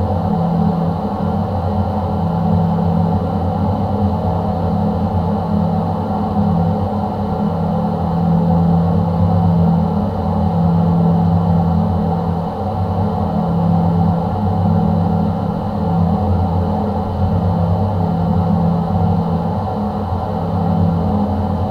{"title": "Biliakiemis, Lithuania, dam drone", "date": "2020-06-06 17:20:00", "description": "a pair contact mics and geophone on the massive metallic tap of the dam", "latitude": "55.47", "longitude": "25.67", "altitude": "159", "timezone": "Europe/Vilnius"}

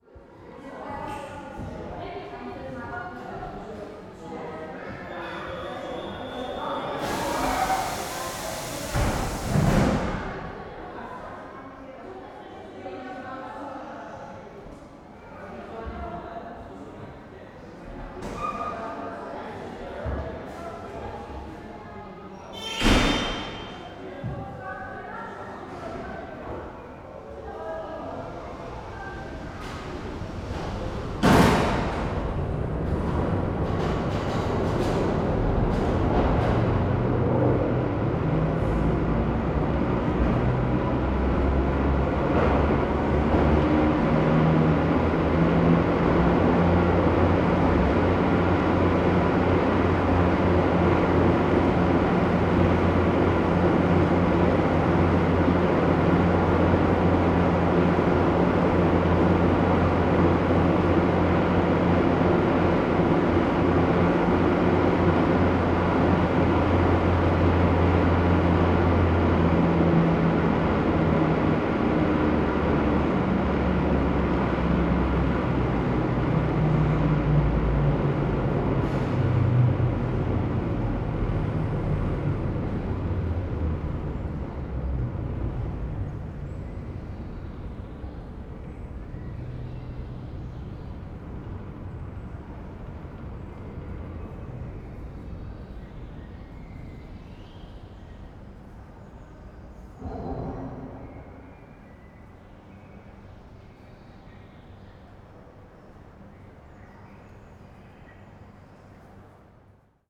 {"title": "Praha, Petřín funicular", "date": "2011-06-22 11:10:00", "description": "funicular departing from upper station", "latitude": "50.08", "longitude": "14.40", "timezone": "Europe/Prague"}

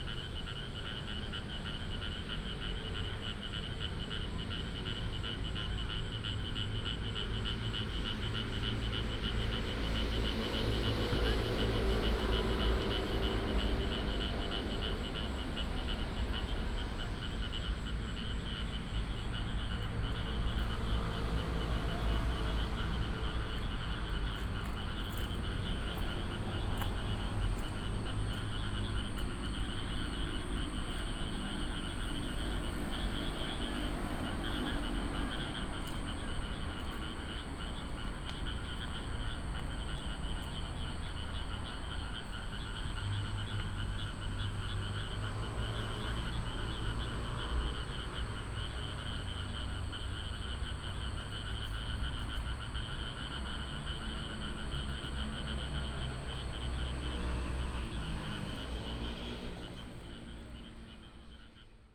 {"title": "建安里社區公園, Pingzhen Dist., Taoyuan City - in the Park", "date": "2017-08-11 20:15:00", "description": "in the Park, traffic sound, The frog sound, Close to rice fields", "latitude": "24.91", "longitude": "121.24", "altitude": "178", "timezone": "Asia/Taipei"}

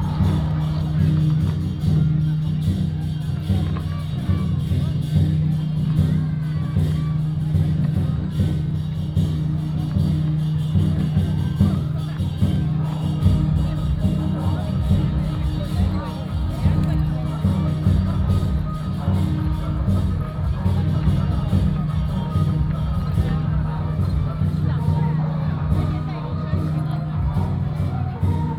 {"title": "Dajia Jenn Lann Temple, 大甲區大甲里 - In the square of the temple", "date": "2017-03-24 15:51:00", "description": "Temple fair, In the square of the temple", "latitude": "24.35", "longitude": "120.62", "altitude": "55", "timezone": "Asia/Taipei"}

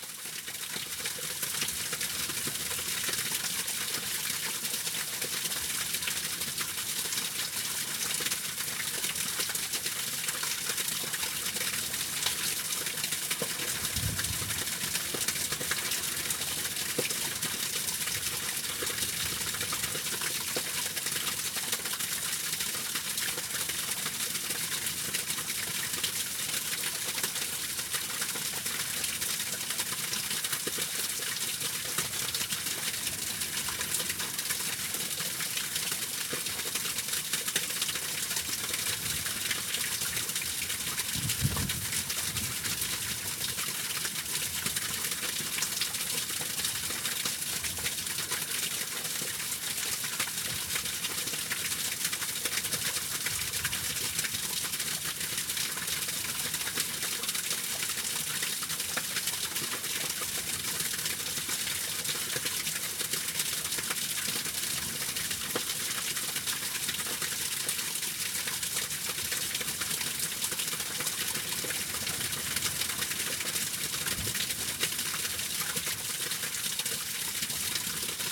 {"title": "frozen waterfall near Baltic Sea", "date": "2010-01-30 18:06:00", "description": "cold winter day after some fresh snow we take a short walk out to the sea.", "latitude": "54.48", "longitude": "10.15", "altitude": "6", "timezone": "Europe/Tallinn"}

